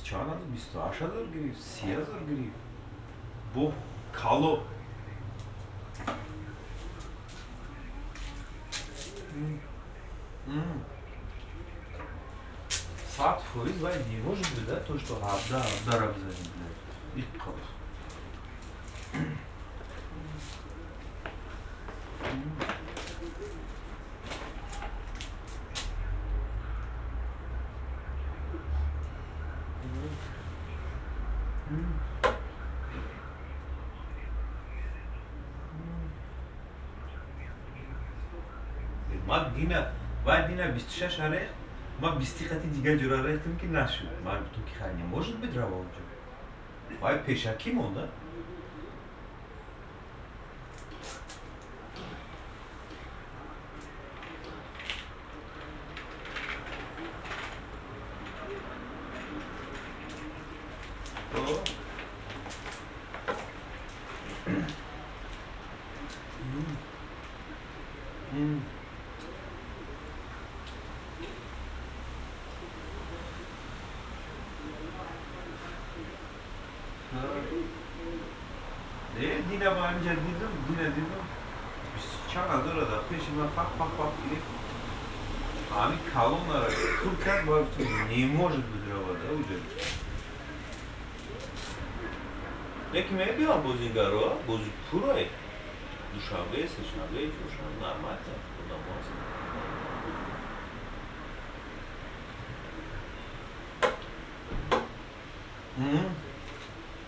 berlin, walterhöferstraße: zentralklinik emil von behring, raucherbereich - the city, the country & me: emil von behring hospital, smoking area
phoning man
the city, the country & me: september 6, 2012
Walterhöferstraße, Berlin, Germany, 6 September 2012, 12:45